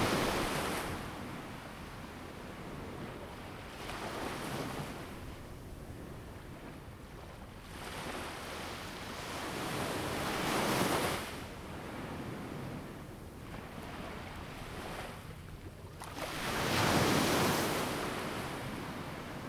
三芝區後厝里, New Taipei City - Beach
Sound of the waves, Beach
Zoom H2n MS+XY
Sanzhi District, New Taipei City, Taiwan, 21 November 2016, ~15:00